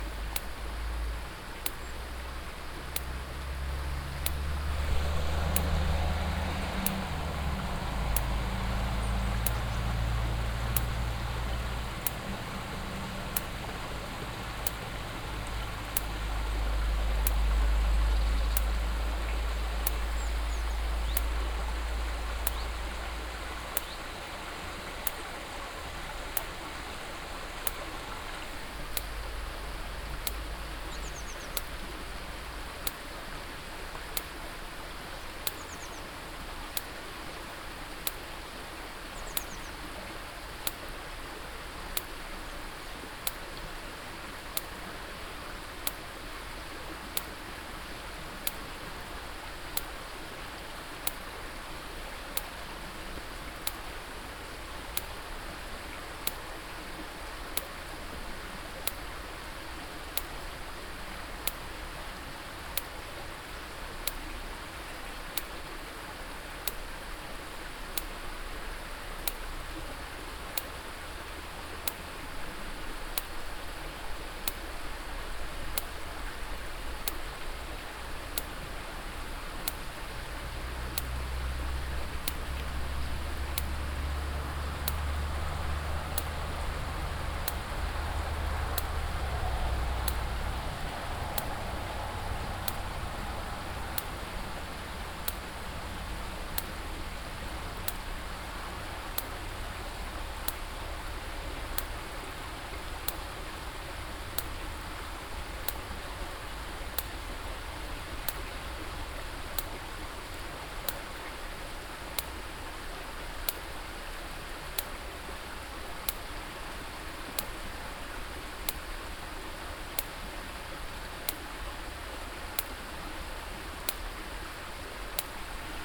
enscherange, rackesmillen, electric fence
At the mill stream close to a sheep field that is secured by an electric fence. The sound of the stream and the electric pulse of he fence.
Enscherange, Rackesmille, Elektrischer Zaun
Am Mühlenbach an einer Scahfsweide die mit einem elektrischen Zaun umgeben ist. Das Geräusch des fliessenden Wassers und des elektrischen Pulses des Zauns.
Au bord du ruisseau du moulin, a proximité d’un champ pour les moutons entouré d’une clôture électrique. Le son du courant et des impulsions électriques de la clôture.
Enscherange, Luxembourg, September 2011